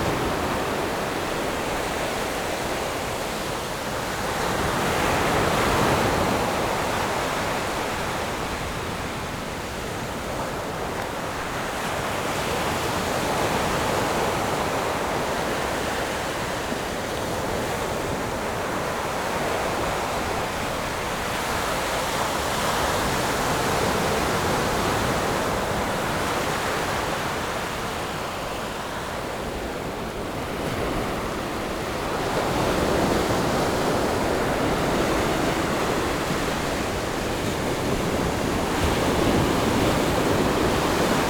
永鎮海濱公園, Yilan County - sound of the waves
In the beach, Sound of the waves
Zoom H6 MS+ Rode NT4